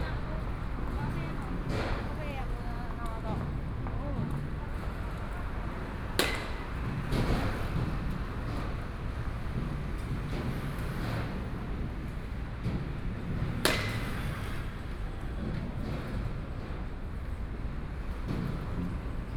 Sec., Fuxing Rd., East Dist., Taichung City - Baseball Batting Field
Baseball Batting Field, Zoom H4n+ Soundman OKM II
Dong District, Taichung City, Taiwan